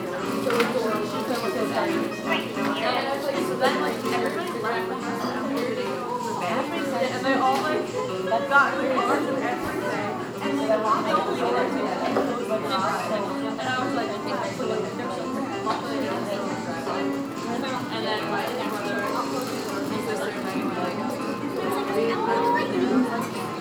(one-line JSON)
{"title": "New Paltz, NY, USA - Starbucks", "date": "2016-10-27 13:50:00", "description": "Starbucks is attached to the Parker Theater and is a social gather spot for students to study and socialize. The recording was taken using a Snowball condenser microphone and edited using Garage Band on a MacBook Pro. It was taken during a busy time of day and while it was raining outside.", "latitude": "41.74", "longitude": "-74.08", "altitude": "108", "timezone": "America/New_York"}